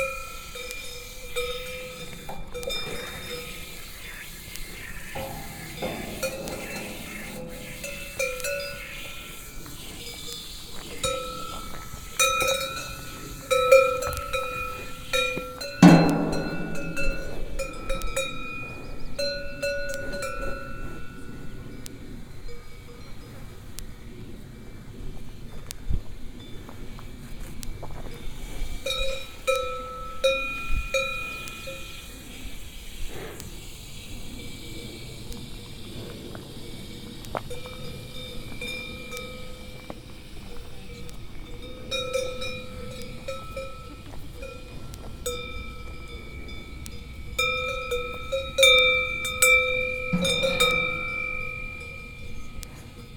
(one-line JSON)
{"date": "2010-07-02 09:12:00", "description": "Les vaches du Mont Bochor / Cows at the Mont Bochor. Binaural recording.", "latitude": "45.39", "longitude": "6.74", "altitude": "2066", "timezone": "Europe/Paris"}